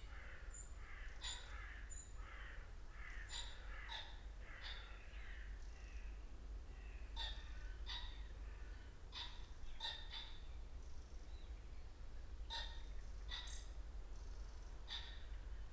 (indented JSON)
{
  "title": "Luttons, UK - pheasants leaving roost ...",
  "date": "2020-11-25 07:09:00",
  "description": "pheasants leaving roost ... dpa 4060s in parabolic to MixPre3 ... bird calls ... crow ... robin ... wren ... blackbird ... treecreeper ... red-legged partridge ... redwing ...",
  "latitude": "54.12",
  "longitude": "-0.57",
  "altitude": "99",
  "timezone": "Europe/London"
}